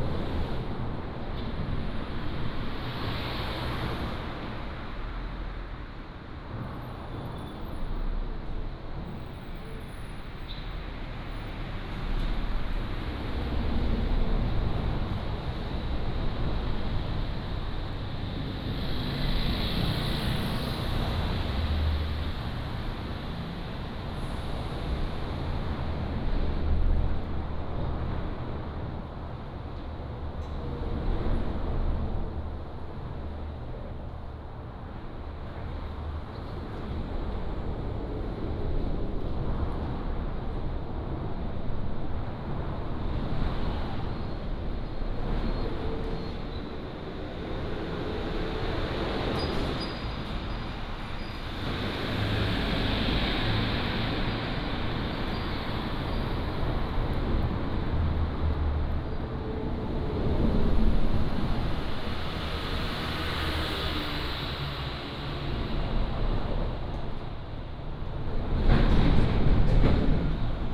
Guangfu Rd., 三義鄉廣盛村 - Under the highway
Under the highway, Traffic sound